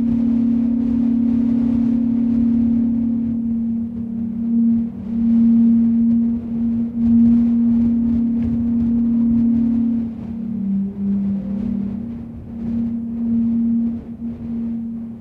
Chacaltaya - Wind singing in an old tube on the top of the mountain
On the top of the mountain, the wind is howling in the old installation of the ski station of Chacaltaya, Bolivia. The station was the highest in the world, and stops a few years ago, because of the smelting of the ice (du to global warming).
The teleski cable and tube is still there and the wind sing in it!
Sound recorded by a MS setup Schoeps CCM41+CCM8 with a Cinela Zephyx Windscreen
Sound Devices 788T recorder with CL8
MS is encoded in STEREO Left-Right
recorded in february 2014 on the top of Chacaltaya Mountain, above La Paz, Bolivia.
Bolivia